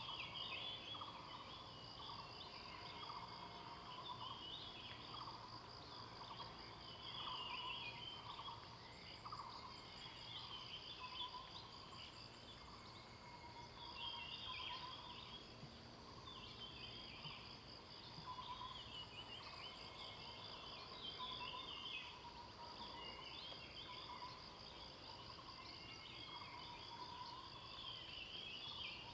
桃米里, Puli Township, Nantou County - Early morning
Bird sounds, Traffic Sound
Zoom H2n MS+XY